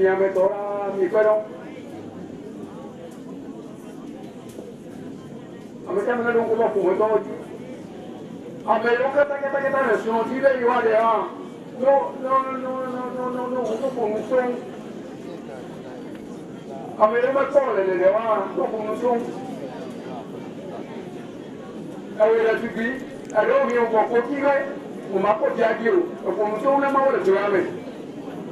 Togbe Tawiah St, Ho, Ghana - church of ARS service: Free praying by the fire
church of ARS service: Free praying by the fire. This is my favourite part where all churchmembers start to share their personal wishes and questions with the Almighty. Surely He is the Greats Multitasker. The sound for me is mesmerizing.